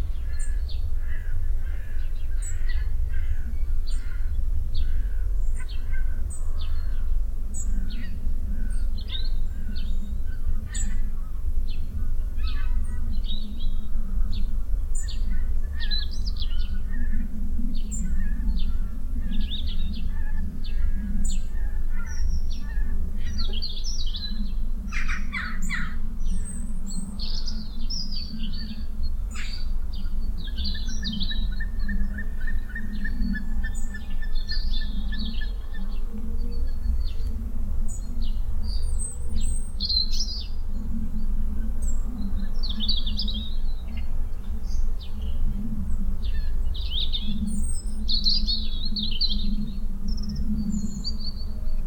ooij, hotel garden
Early Fall. Walking in the garden of the Oortjeshekken Hotel in the early morning. The sound of several bird voices including wild gooses that gather on a nearby meadow. In the distance church bells and the sound of planes and traffic passing by
international village scapes - topographic field recordings and social ambiences
Ooij, The Netherlands, 4 November 2011, ~15:00